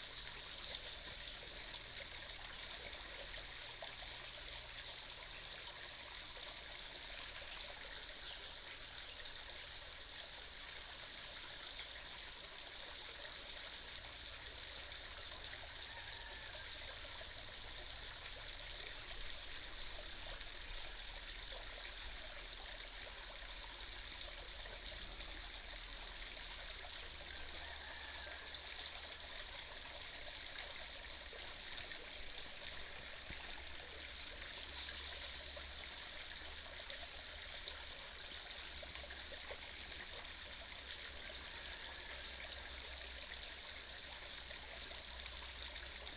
中路坑溪, 埔里鎮桃米里 - The sound of water streams
The sound of water streams, Chicken sounds